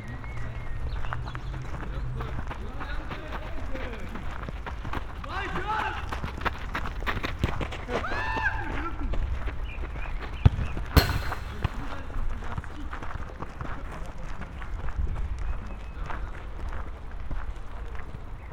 am Kalkberg, Buchforst, Köln - soccer area
soccer area behind the Kalkberg, an artificial hill created out of the waste of a chemical plant. youngsters playing, monday evening.
(tech: Olympus LS5, Primo EM172)